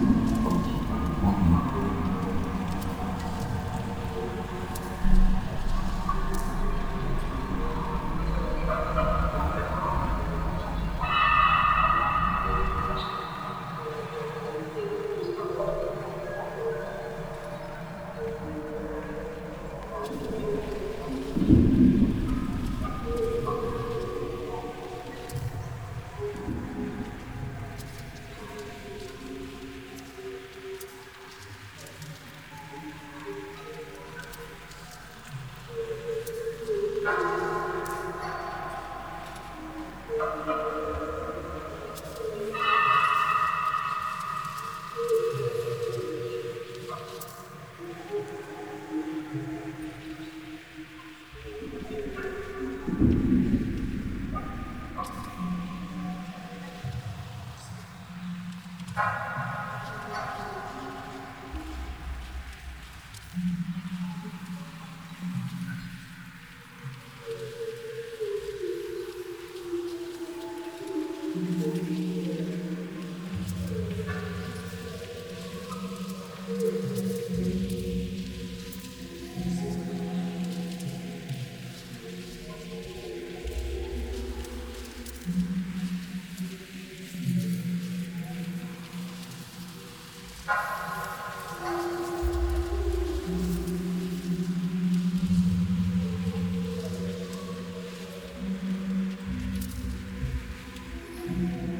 Rîșcani, Kischinau, Moldawien - Chisinau, Galeria Podzemka, installation
At the krypta of Galeria Podzemka.
you can find more informations here:
soundmap Chisinau - topographic field recordings, sound art installations and social ambiences
Chişinău, Moldova, 16 October 2015, 14:03